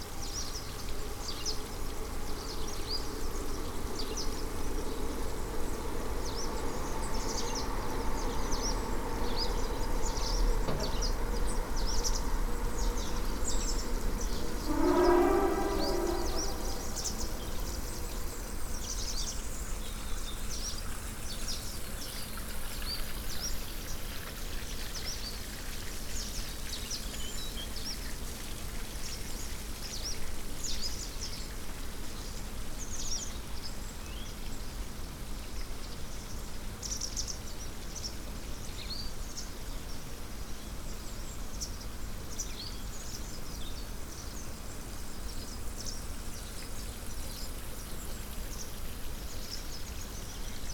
Battle Sewage Works, East Sussex, UK - Battle Sewage Works with Pied Wagtails
Recorded close to Battle Sewage Works with Tascam DR-05 and wind muff. Sounds: circular rotating settlement and filter tanks, 80-100 pied wagtails attracted by the insects and several hoots from passing trains.
England, United Kingdom, 10 January 2020, ~12:00